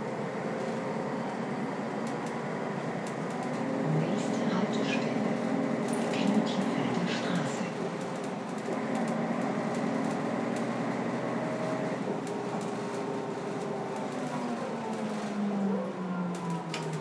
Mit den Buslinien 154, 156 und 351 über 27 Stationen von der nördlichsten (Steinwerder, Alter Elbtunnel) bis zur südlichsten (Moorwerder Kinderheim) Bushaltestelle Wilhelmsburgs.
Hamburg, Deutschland, 8 October 2010, 13:30